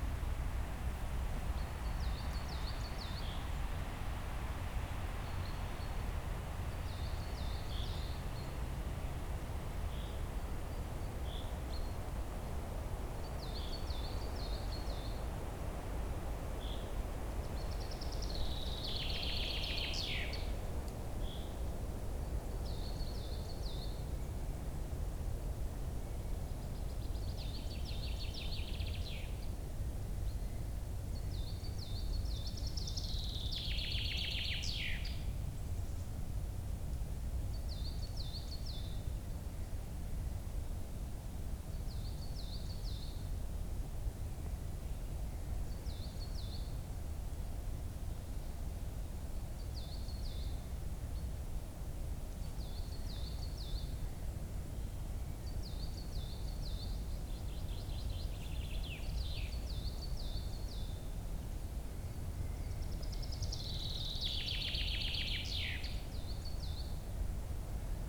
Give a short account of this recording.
wind in trees, forest ambience, river Löcknitz valley, near village Klein Wall. (Sony PCM D50, DPA4060)